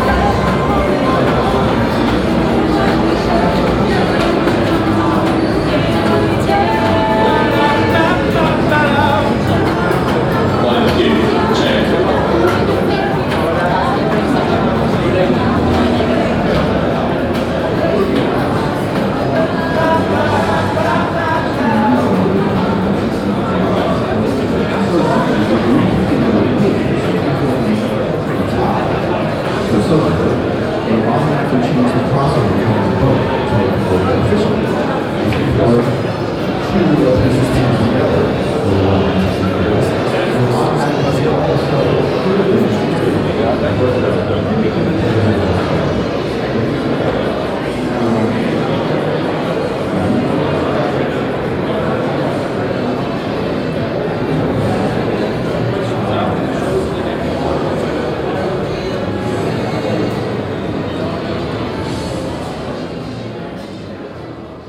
Westend-Süd, Frankfurt am Main, Deutschland - frankfurt, fair, hall 6
Inside hall 6 of the frankfurt fair areal. Walking through the electronic devices department of the music fair. The sound of people crossing and talking overshadowed by different kinds of music from the exhibitor stands.
soundmap d - social ambiences and topographic field recordings